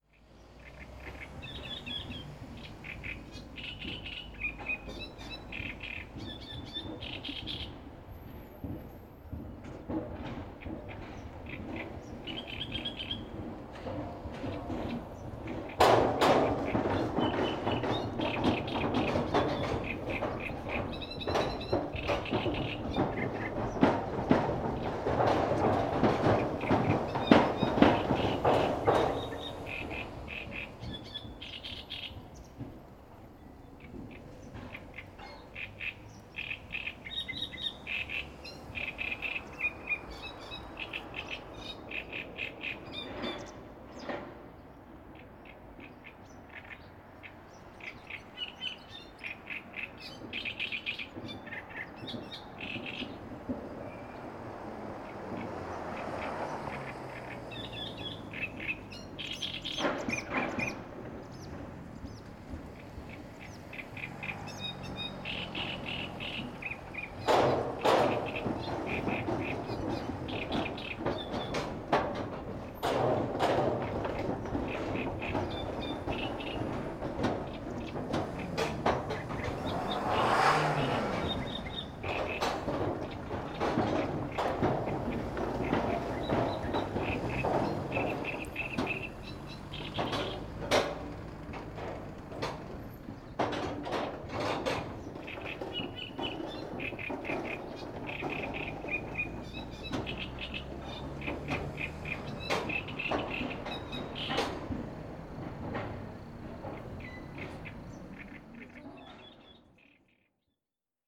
Wyspa Sobieszewska, Gdańsk, Poland - Most i ptak
Dźwięki mostu i ptaków. rec Rafał Kołacki
24 May 2016